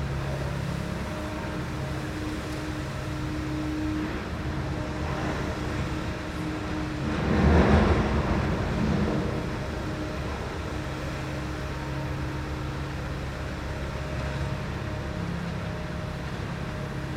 construction noise out my window at midnight
Marmara Bölgesi, Türkiye